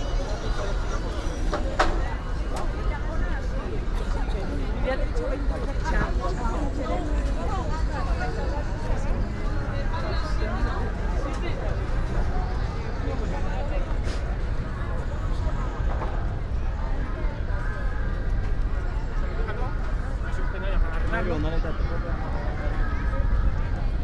El Barri Gòtic, Barcelona, España - Binaurales - gente y barcos